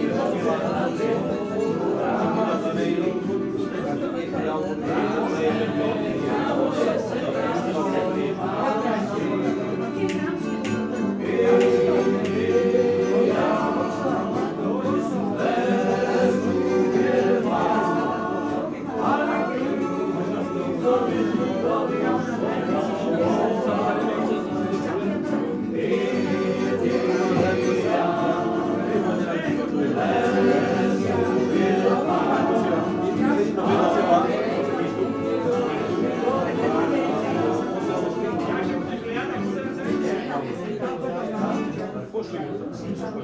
Bratislava, Drevená dedina - Trampský večer - Tramp evening
Every wednesday evening Bratislava‘s Tramps are gathering in some of the few remaining long standing pubs to celebrate their tradition, drinking and singing together.